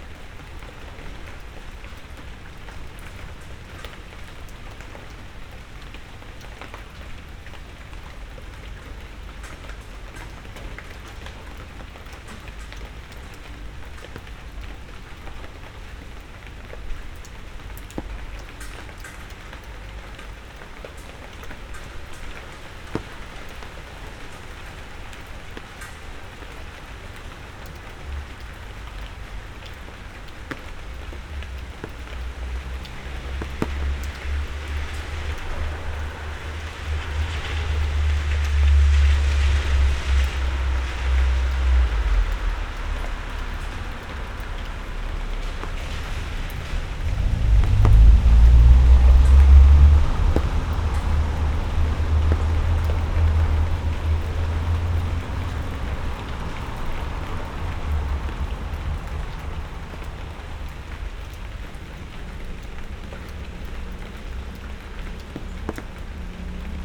under the awning of the café
the city, the country & me: july 7, 20122
99 facets of rain
Berlin, Germany, 7 July 2012, 03:17